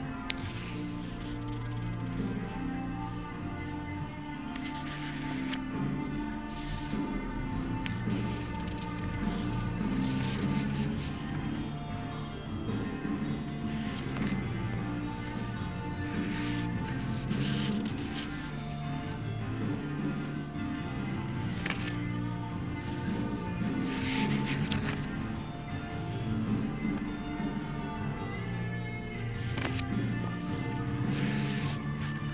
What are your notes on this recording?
recorning of a live session with phone to radio aporee, saturday morning, spoken words, leaves of small red notebook